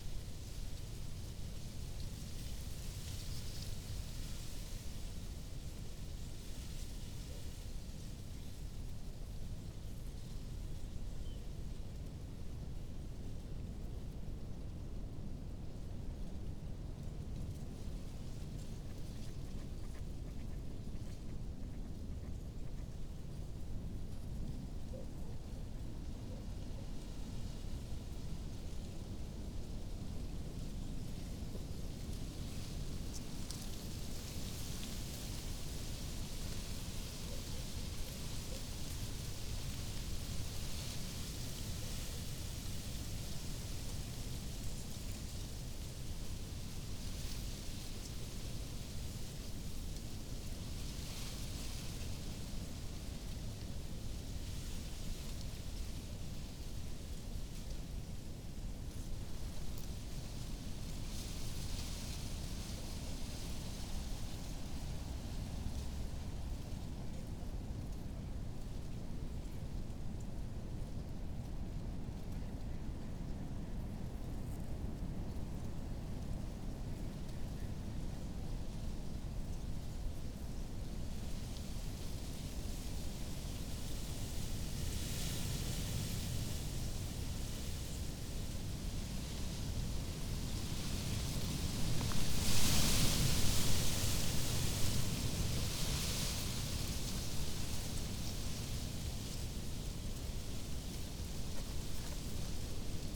groß neuendorf, oder: river bank - the city, the country & me: reed
stormy afternoon, reed rustling in the wind, some ducks and a barking dog in the distance
the city, the country & me: january 3, 2015
Letschin, Germany, January 2015